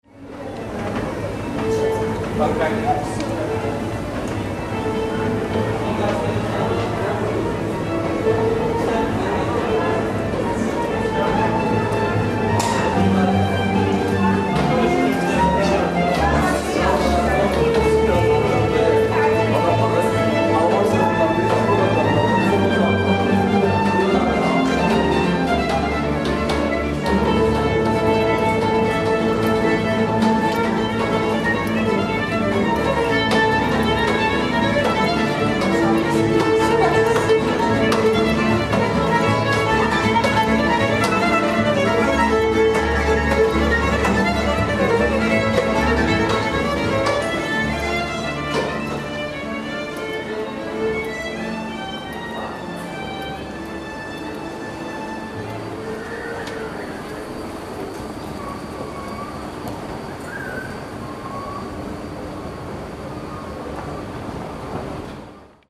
Levent metro station, a week of transit, monday morning - Levent metro station, a week of transit, tuesday afternoon
Fading in, fading out... the passage.